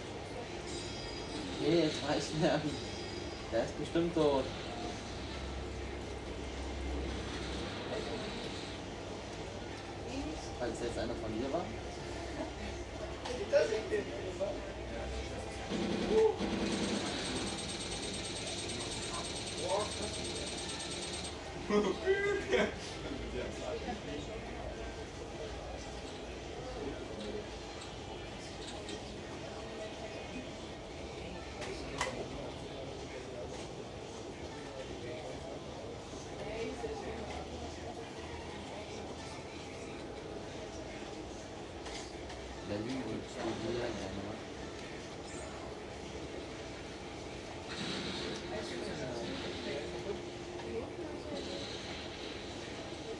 Ackerstraße, Mitte, Berlin, Deutschland - Ackerstraße, Berlin - LAN party in a youth centre
Ackerstraße, Berlin - LAN party in a youth centre. The teenagers play a game called 'Battlefield 1942'. Still open in 2006, the youth centre is closed by now.
[I used an MD recorder with binaural microphones Soundman OKM II AVPOP A3]
Deutschland, European Union, 6 February 2006